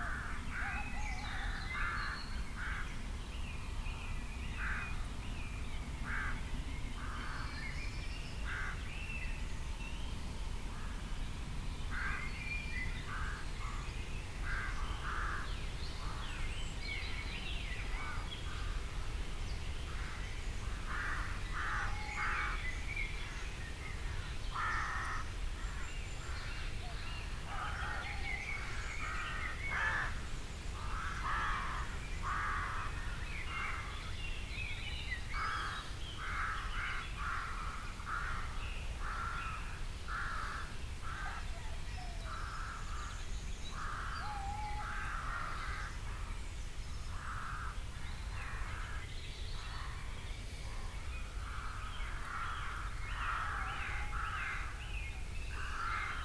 Bishops Sutton, Hampshire, UK - owls and jackdaws
This was made very early in the morning, with me and Mark both dozing in the tent and half-listening to the sounds of the early morning bird life. You can hear an owl a small distance away, our sleepy breathing, and the sound of some jackdaws. All muffled slightly by the tent... recorded with sound professionals binaural mics suspended from the top of the tent and plugged into my edirol r09.
30 April